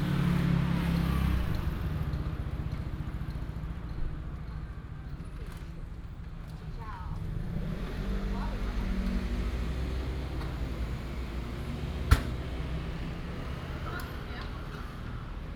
Dazhi St., Shigang Dist., Taichung City - Old community night
Old community night, traffic sound, The store is closed for rest, Binaural recordings, Sony PCM D100+ Soundman OKM II
Shigang District, Taichung City, Taiwan, 1 November